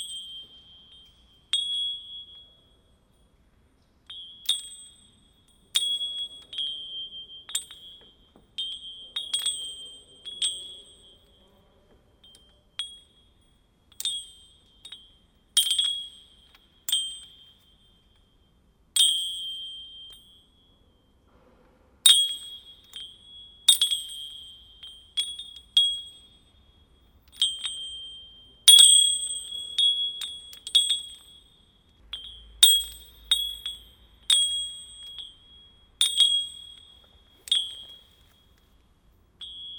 Into an abandoned factory, I'm plating with chains as a simple and rustic music instrument. Workers were repairing train axles into this place, it explains why there's a lot of chains.
Seraing, Belgium, 29 October 2017, 09:15